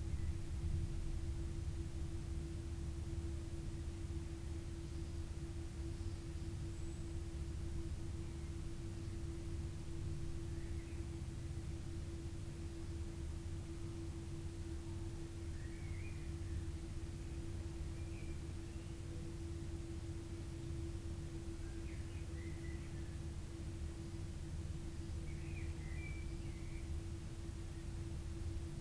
Luttons, UK - inside church ... outside thunderstorm ...
inside church ... outside thunderstorm ... open lavalier mics clipped to a sandwich box ... background noise ... traffic ... etc ...